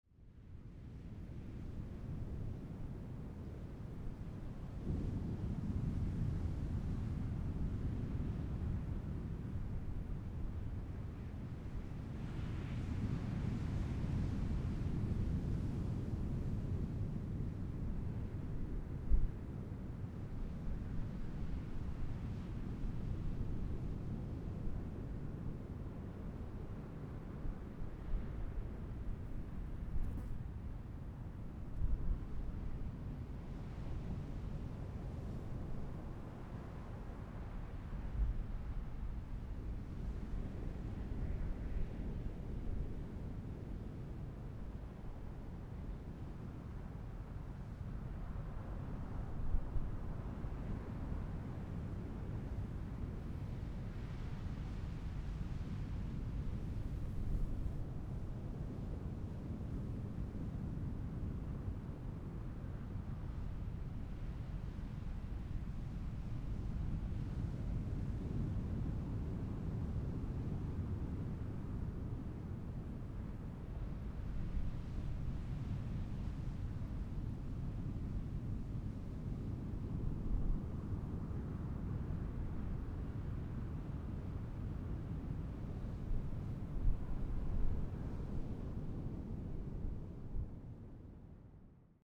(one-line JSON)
{"title": "Taitung City, Taiwan - Sound of the waves", "date": "2014-01-16 14:02:00", "description": "on the beach, Sound of the waves, Zoom H6 M/S", "latitude": "22.76", "longitude": "121.17", "timezone": "Asia/Taipei"}